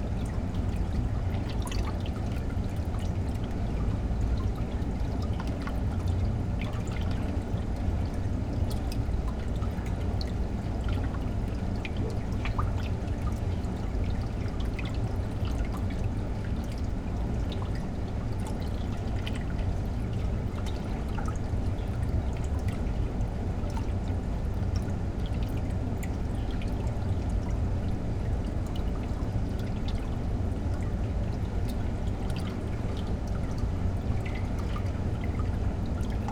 It's a beautiful old brick-built Victorian pumping station on the Kennet and Avon canal just outside Reading. It's roof went into disrepair a few years ago but a new one was put in place with stories of turning it into a canal-side cafe. The door was locked and double bolted, but that didn't last long..Now you can gain access, and this is one of the lovely soundscapes that greets your ears. Sony M10